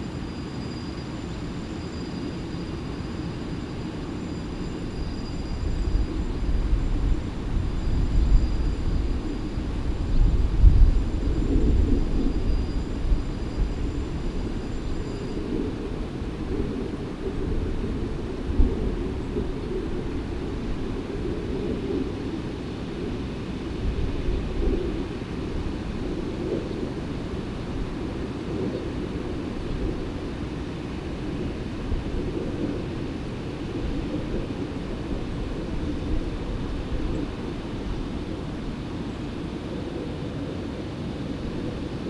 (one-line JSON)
{"title": "Ballard Locks - Ballard Locks #2", "date": "1998-11-13 12:06:00", "description": "The Hiram M. Chittenden Locks, popularly known as the Ballard Locks, raise and lower boats traveling between freshwater Lake Washington and saltwater Puget Sound, a difference of 20 to 22 feet (depending on tides). A couple hundred yards downstream is a scenic overlook, almost directly beneath the Burlington Northern trestle bridge shown on the cover. From that spot we hear a portrait of commerce in 3-dimensions: by land, by air and by sea.\nMajor elements:\n* The distant roar of the lock spillway and fish ladder\n* Alarm bells signifying the opening of a lock\n* Boats queuing up to use the lock\n* Two freight trains passing overhead (one long, one short)\n* A guided tour boat coming through the lock\n* Planes and trucks\n* Two walkers\n* Seagulls and crows", "latitude": "47.67", "longitude": "-122.40", "altitude": "34", "timezone": "America/Los_Angeles"}